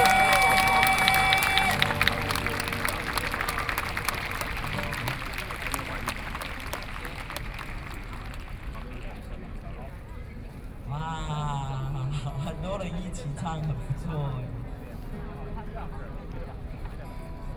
中正區 (Zhongzheng)Taipei City, Taiwan - Antinuclear
Taiwanese Aboriginal singers in music to oppose nuclear power plant, Sing along with the scene of the public, Sony PCM D50 + Soundman OKM II
台北市 (Taipei City), 中華民國, 6 September 2013, ~20:00